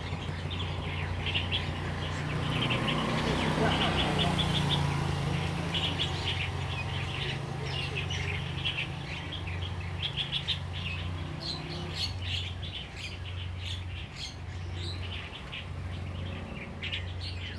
本福村, Liuqiu Township - Birds singing
Birds singing, Traffic Sound
Zoom H2n MS +XY